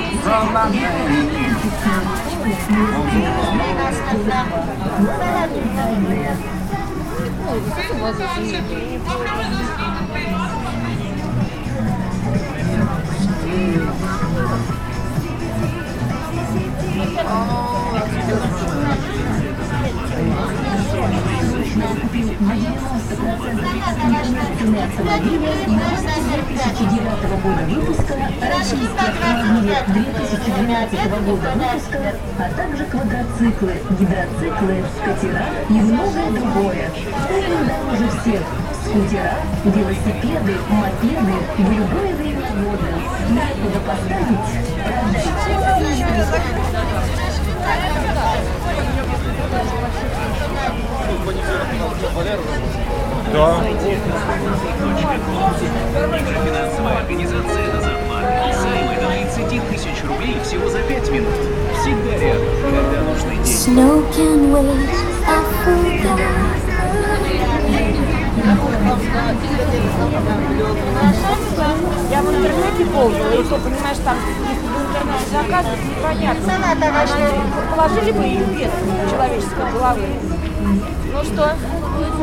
Sennaya square is a large public transportation knot and a trading area with many small retail shops.
On this recording you hear audio commercials and music coming from speakers mounted outside stores blending with sounds of footsteps, conversations, street lights signals and traffic noise. It is an example of a dense urban soundscape, lo-fi in R. Murray Schafer's terms, but vibrant and culturally interesting.